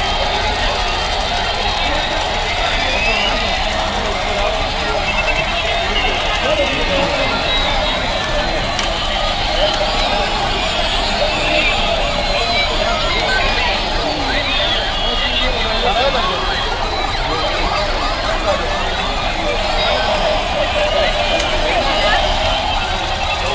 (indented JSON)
{
  "title": "Istanbul, Eminönü - Toys in motion - Market in passage underground",
  "date": "2010-09-02 13:09:00",
  "latitude": "41.02",
  "longitude": "28.97",
  "timezone": "Europe/Istanbul"
}